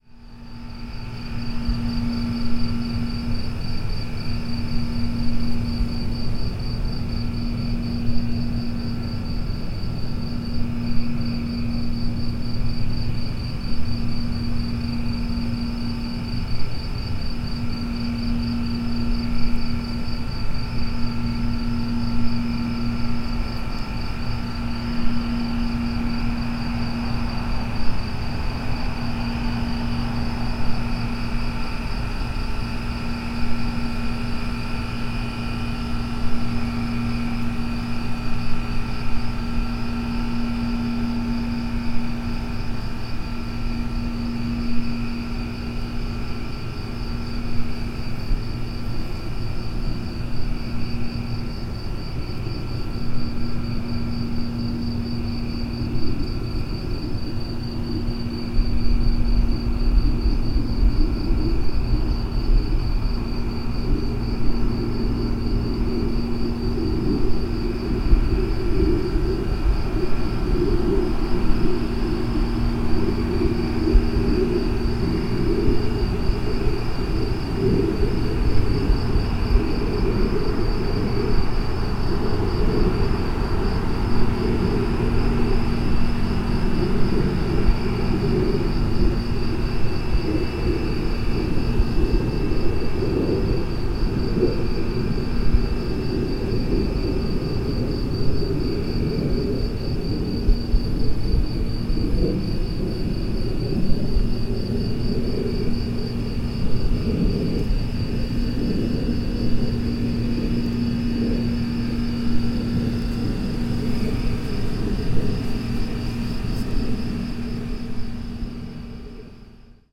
Freedom, MD, USA - The Sunken Hum Broadcast 164 - 365 Degrees of Night in Suburbia - 13 June 2013
Air conditioning units, overhead planes, crickets, cars passing....all just the sounds of nighttime in suburbia.